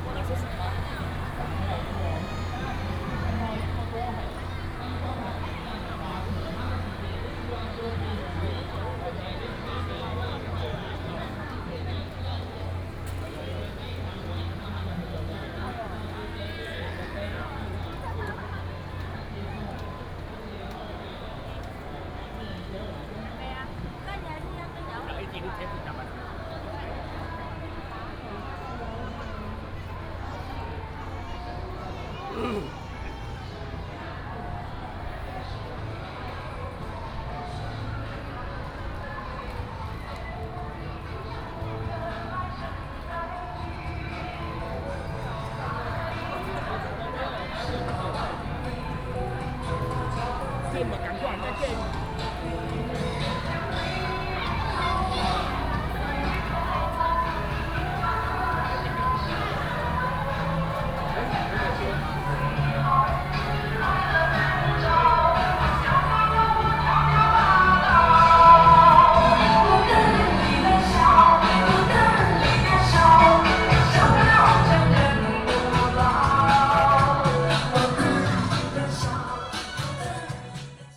Xiao 2nd Rd., Ren’ai Dist., Keelung City - Traditional and modern shows
Noise Generator, Walking on the road, Traditional and modern variety shows, Keelung Mid.Summer Ghost Festival
Keelung City, Taiwan, 16 August 2016